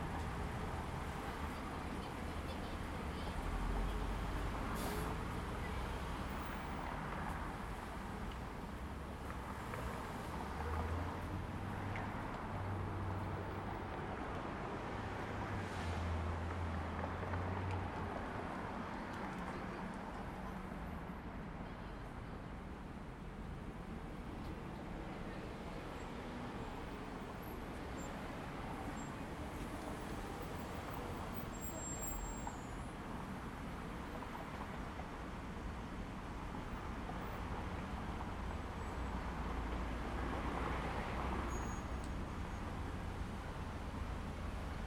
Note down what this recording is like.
LA - pasadena intersection, colorado / los robles; traffic and passengers;